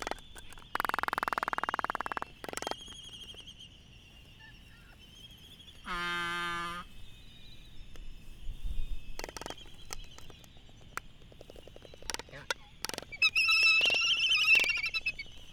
United States Minor Outlying Islands - Laysan albatross dancing ......
Laysan albatross dancing ... Sand Island ... Midway Atoll ... open lavalier mics on mini tripod ... voices ... carts ... and a break ...